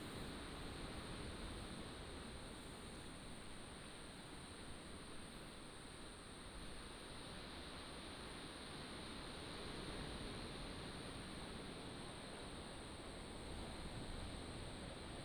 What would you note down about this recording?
Standing next to a large rock cave, Sound of the waves